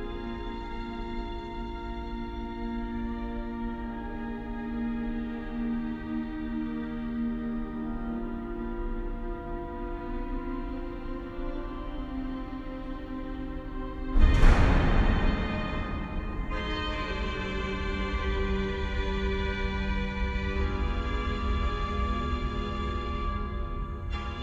Paris, parking souterrain notre Dame
Paris, France